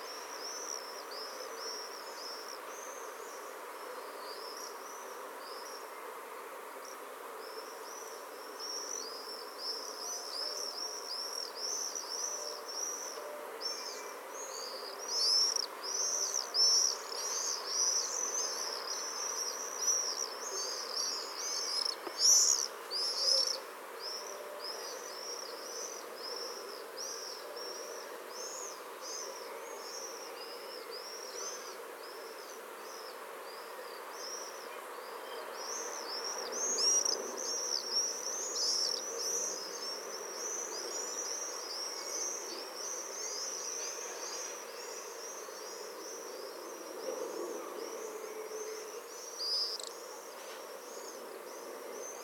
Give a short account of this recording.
Recorded with a parabolic microphone, swifts flying over Tierney Road, London